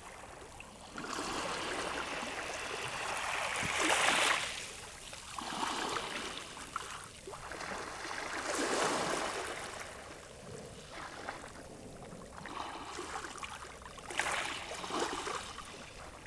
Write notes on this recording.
Sound of the sea, with waves lapping on the pebbles, at the quiet Criel beach.